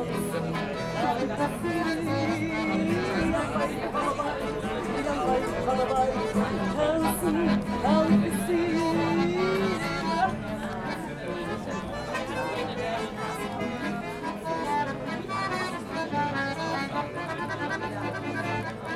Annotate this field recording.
street musicians performing a yiddish song, the city, the country & me: july 10, 2011